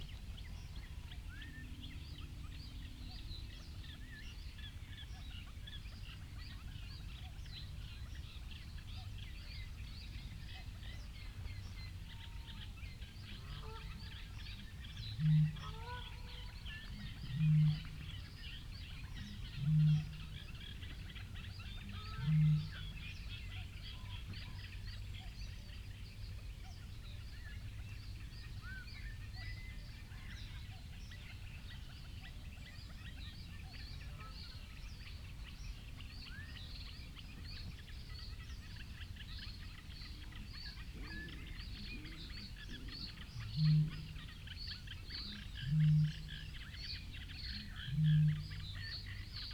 London Drove, United Kingdom - distant booming bittern soundscape ...
distant booming bittern soundscape ... north hide ... lavalier mics clipped to sandwich box ... bird call ... song ... from ... reed warbler ... canada goose ... pheasant ... coot ... reed bunting ... mute swan ... carrion crow ... mallard ... cetti's warbler ... gadwall ... cuckoo ... tawny owl ... great tit ... 2:48 a mute swan tries to drown ..? a canada goose in an adjacent lake ... only surmising ... too dark to see ... traffic noise ...
April 2019